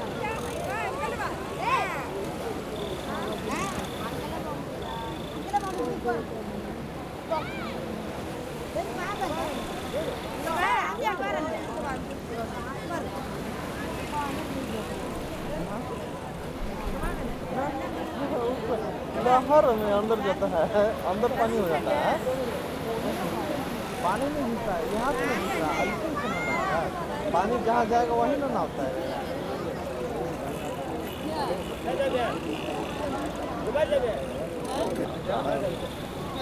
W End Beach Rd, Kanyakumari, Tamil Nadu, India - sunset 3 seas point
sunset 3 seas point Kaniyakumari. Known to be the southern most tip of the Indian Sub Continent where the Bay of Bengal, Indian Ocean and the Arabian Sea meet. known for pilgrimage and tourism is on the southernmost point of Indian sub-continent. An ancient temple of Goddess and Vivekanand Rock Memorial along with statue of Thiruvalluvar is the major attraction. This is also a Sunrise and Sunset point (Both)